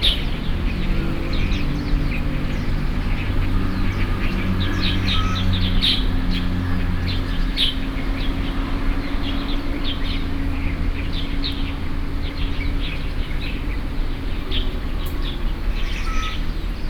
硫磺谷停車場, Beitou District, Taipei city - bird